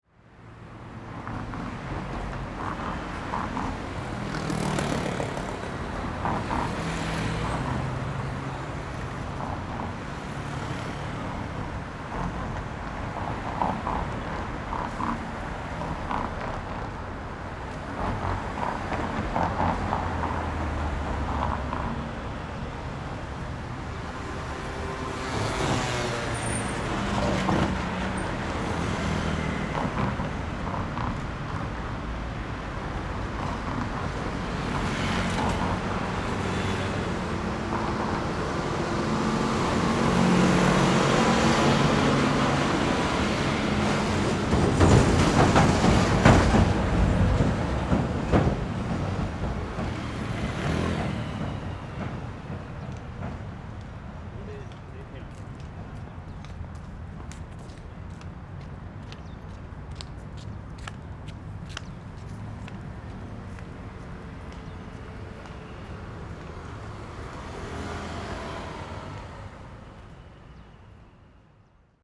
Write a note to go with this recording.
Traffic Sound, Zoom H4n + Rode NT4